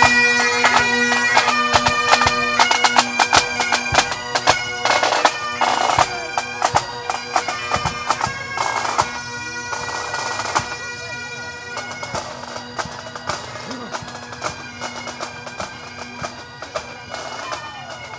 Street Pipes, Greater Heights, Houston, TX, USA - Street Pipes (BCP&D)
Bayou City Pipes and Drums passing our shady spot during the Lindale Park Fourth of July Parade.
Sony PCM D50
4 July 2013, ~11:00